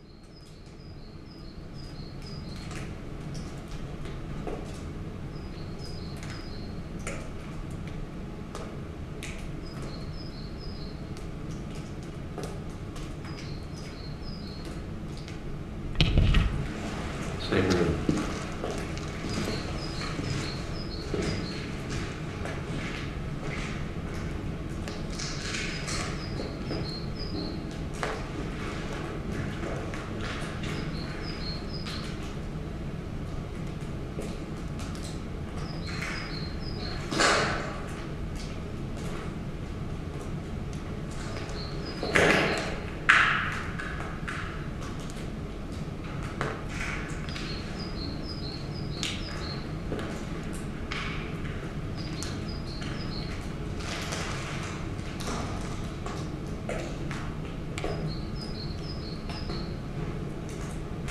Glauzig Factory Ruin
Glauzig, factory, ruin, salt, sugar, tobacco, DDR, Background Listening Post